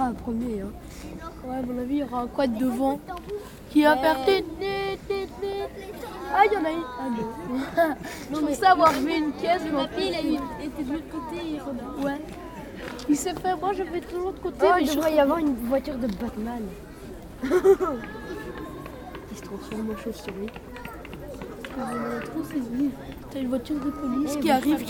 Mont-Saint-Guibert, Belgique - Soapbox race
Soapbox race in Mont-St-Guibert, the very beginning of the race.